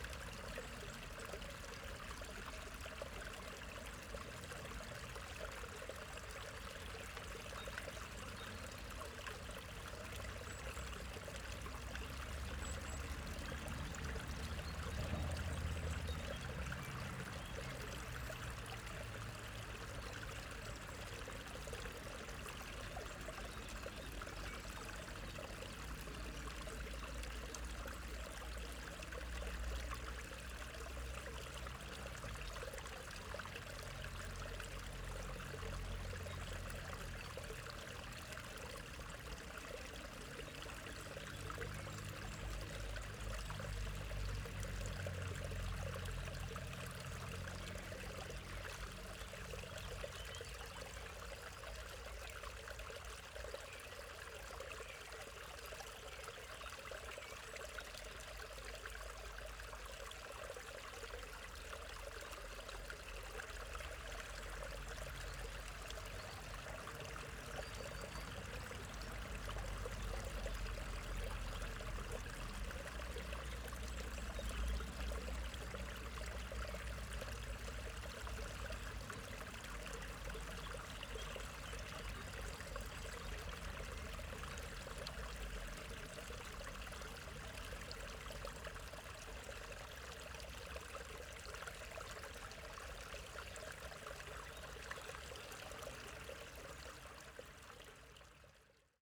Bubbling waterfall, small stream, Údolní, Praha, Czechia - Bubbling waterfall, small hillside stream
This small stream runs from the top of hill near here to the river Vlatava. Through Branik town it flows out of sight or hearing in pipes underground. But here it still tumbles down the steep hillside. In two places it slows to form dark ponds amongst the trees where sometimes visited by a couple of mallard ducks. This waterfall is just above the lower pond and makes a nice bubbly contrast to the constant traffic noise of the area.
Praha, Česko, 6 April 2022